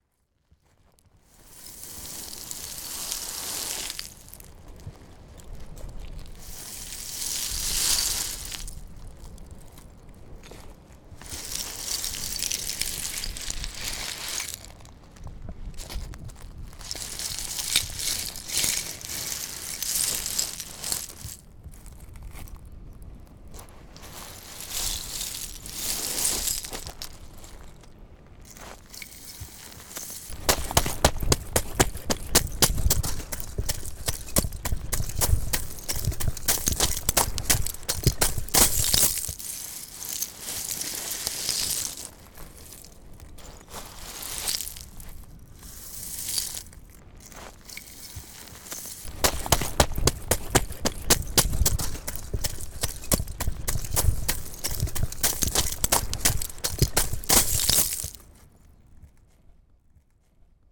France, St Nazaire - Run on seashells
Sound recorded on the beach with a Zoom H2 (an old model).
I just modified it a bit because records weren't one min long.
Saint-Nazaire, France, 22 September 2015, 19:42